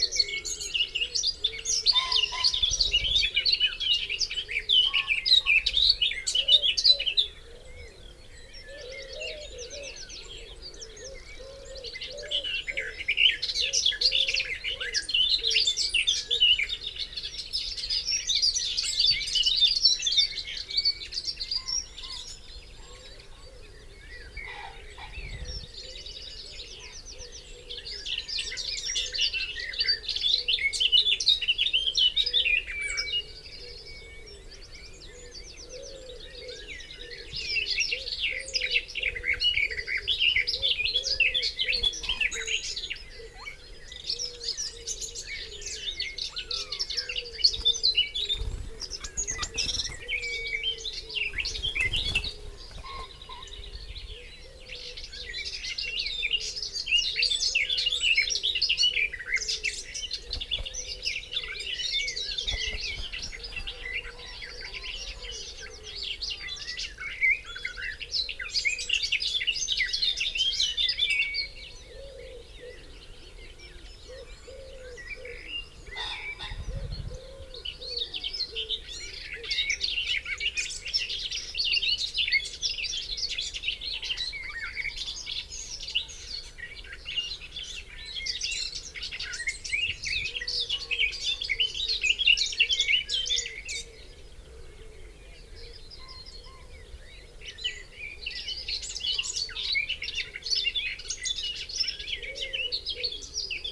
Green Ln, Malton, UK - Garden warbler at dawn ...
Garden warbler at dawn soundscape ... open lavalier mics clipped to hedgerow ... bird song and calls from ... pheasant ... willow warbler ... blackcap ... wood pigeon ... wren ... yellowhammer ... chaffinch ... blackbird ... background noise from planes and traffic ...